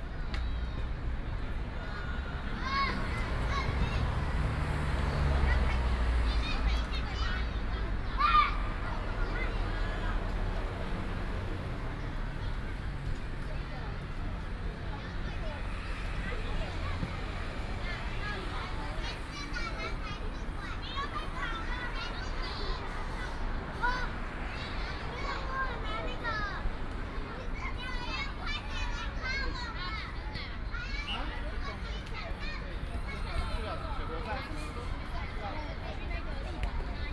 Chaoma Rd., Xitun Dist., Taichung City - Next to the football field
Next to the football field, Many kids are playing football, traffic sound, Binaural recordings, Sony PCM D100+ Soundman OKM II